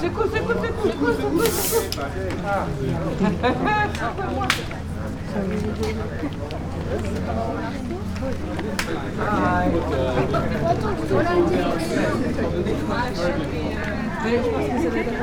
Euphrasian Basilica, Poreč, Croatia - saturday noon
languages, steps and other voices ...